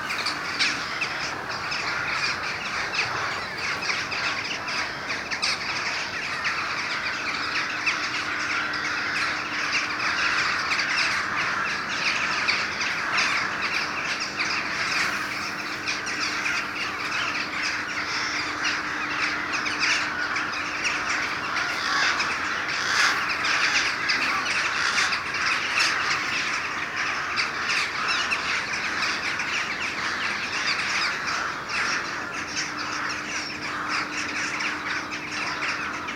Walking on the river Seine bank, we disturbed a huge crows and jackdaws group.
Vernou-la-Celle-sur-Seine, France